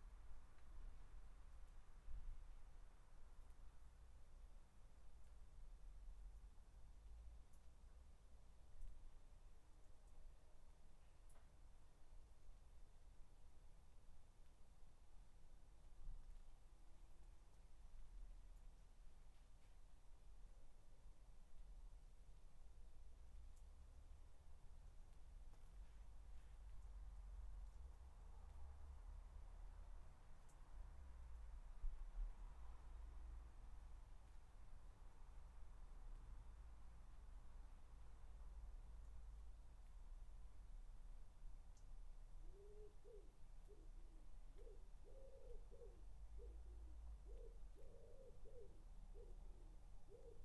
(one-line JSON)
{"title": "Dorridge, West Midlands, UK - Garden 11", "date": "2013-08-13 13:00:00", "description": "3 minute recording of my back garden recorded on a Yamaha Pocketrak", "latitude": "52.38", "longitude": "-1.76", "altitude": "129", "timezone": "Europe/London"}